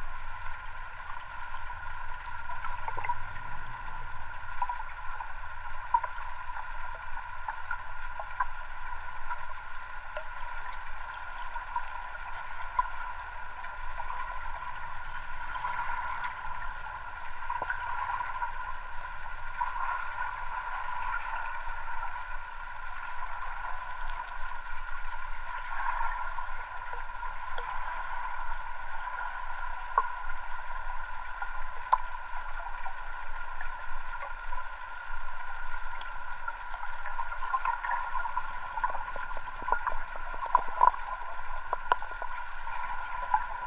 Rīga, Latvia, water canal
Hydrophone recording of Riga canal.